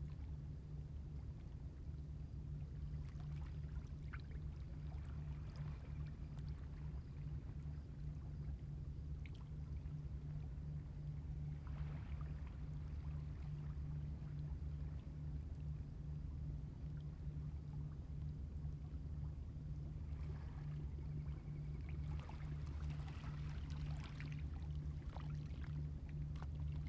青螺村, Huxi Township - Tide
Tide, In the coastal edge, Seabirds sound, The distant sound of fishing vessels
Zoom H2n MS+XY
21 October 2014, 16:48